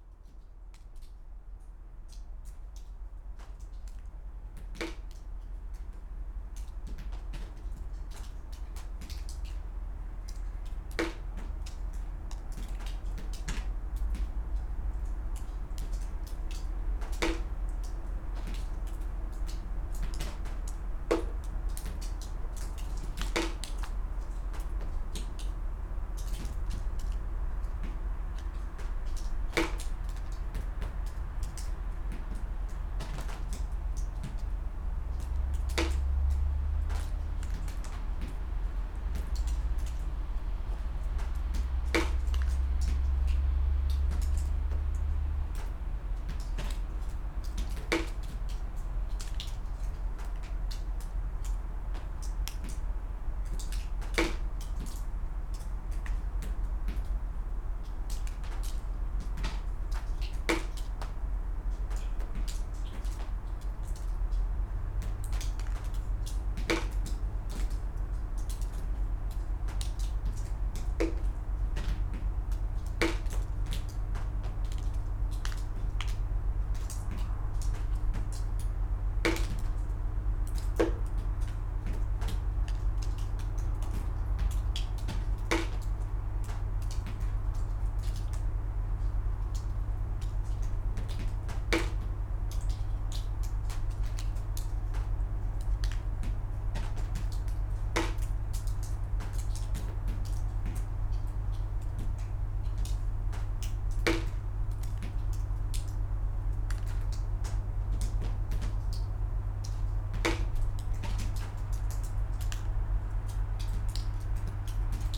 Utena, Lithuania, abandoned soviet barrack
raindrops in abandoned soviet barracks